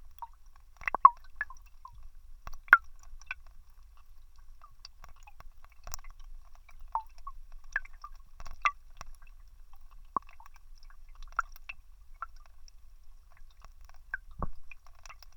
Snowy day. Wet melting snow falls down from the bridge. Hydrophone recording.